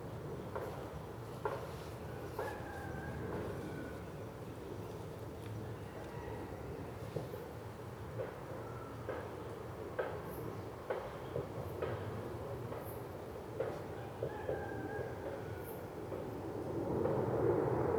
撒固兒步道, Hualien City - In the woods
In the woods, Chicken sounds, Construction of the sound, Aircraft sound, The frogs chirp
Zoom H2n MS+XY +Sptial Audio